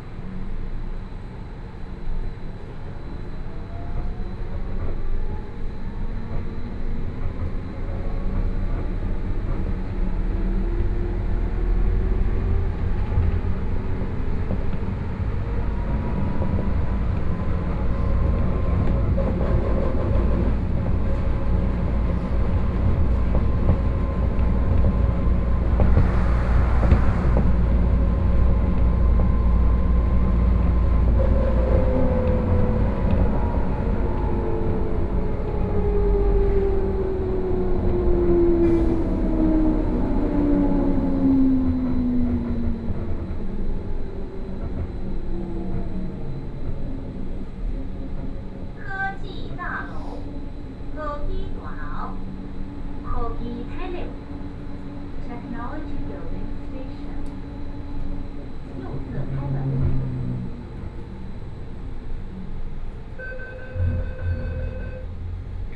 Da'an District, Taipei - Wenshan Line (Taipei Metro)
from Zhongxiao Fuxing Station to Liuzhangli Station, Sony PCM D50 + Soundman OKM II